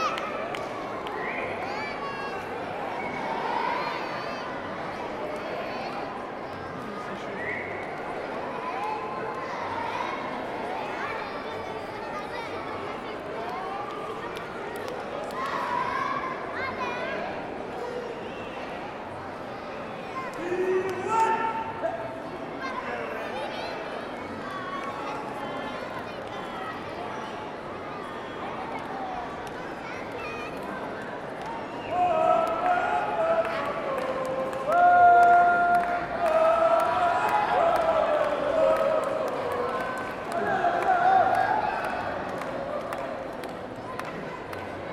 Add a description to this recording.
Amphithéâtre.Festival "Cergy Soit!" 2014 .avant un spectacle, le public, s'impatiente . Amphitheater.During Festival "Cergy Soit!" 2014.Audience Before a show.